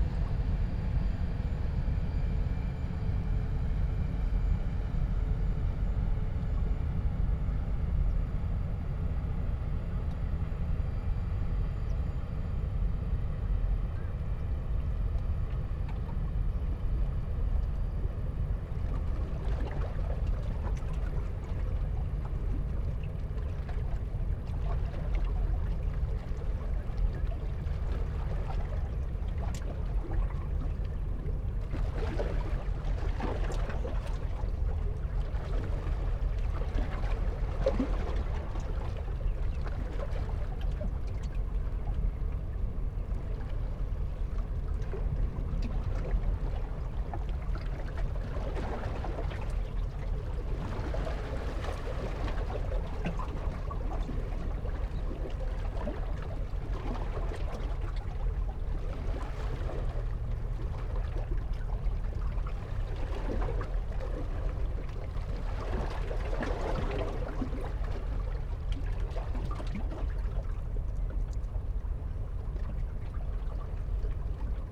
Rhein river banks, Riehl, Köln, Deutschland - ships passing-by
sitting at the river Rhein, Köln Riehl, spotting at ships
(Sony PCM D50, Primo EM172)